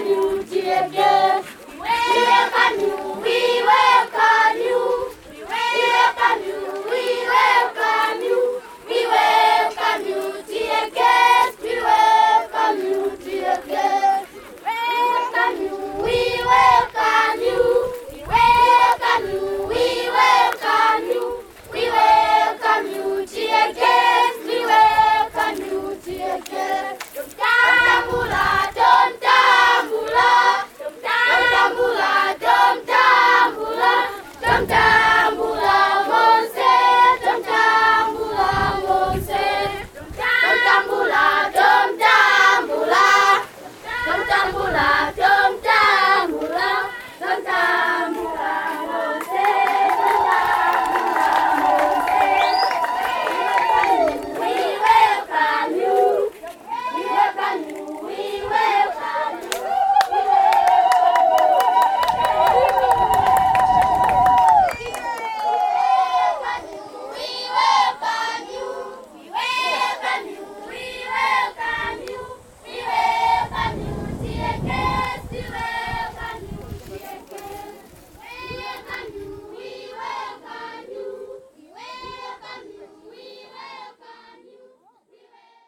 Damba Primary School, Binga, Zimbabwe - We welcome you...
…we are witnessing an award ceremony at Damba Primary School, a village in the bushland near Manjolo… the village and guest are gathered under the largest tree in the school ground… pupils are marching into the round in a long line welcoming all singing …